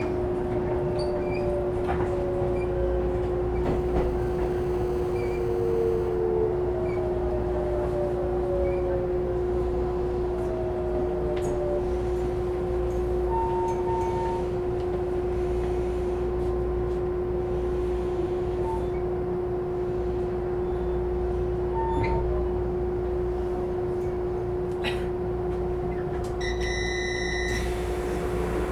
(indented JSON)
{
  "title": "Luzhu, Kaohsiung - inside the Trains",
  "date": "2012-03-29 15:00:00",
  "description": "from LuzhuStation toGangshan Station, Trains traveling, Train crossing, Train broadcast message, Sony ECM-MS907, Sony Hi-MD MZ-RH1",
  "latitude": "22.83",
  "longitude": "120.28",
  "altitude": "7",
  "timezone": "Asia/Taipei"
}